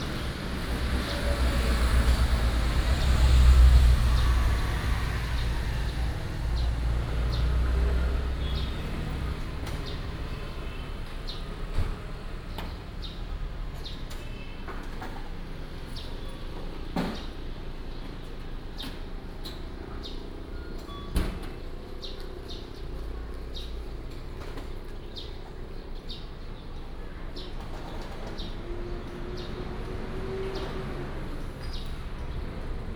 Small street, Bird sounds, Traffic Sound
Yuchi Township, 131縣道322-352號, 18 May